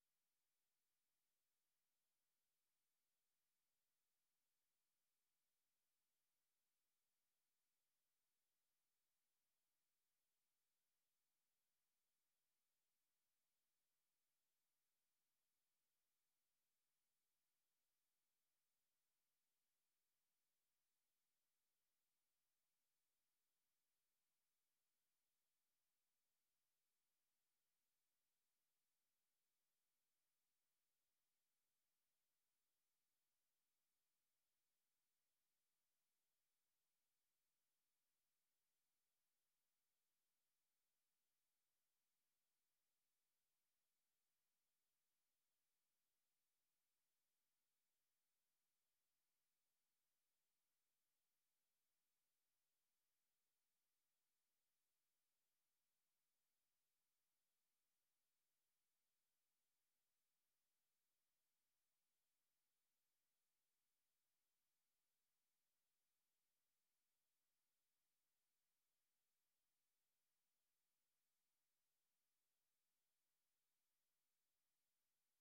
sand pits, natural reservation, rec. Grygorij Bagdasarov